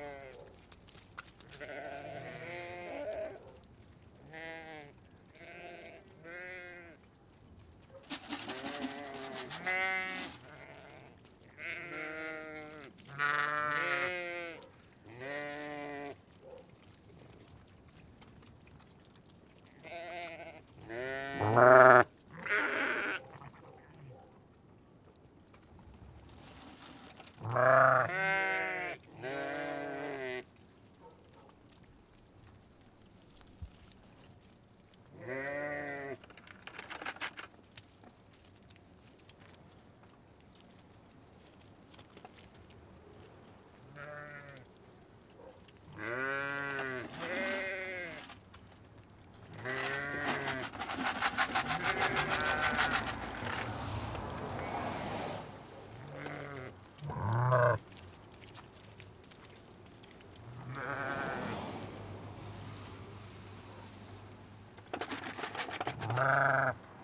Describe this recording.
This is the sound of Brian Knowles's Rams when we went to feed them. You can hear Brian shaking the food for them, as sheep are generally pretty quiet in the winter time, but soon get noisy if they sense the possibility of food to hand! I am not completely certain that I have located this file correctly, but it's the best I can do with the maps and the memories I have of travelling around the Lake District last January. Brian and Jane Knowles live at High Borrow Farm in Selside, but their land from memory seemed to stretch in several directions, and a lot of it was right there on the main road.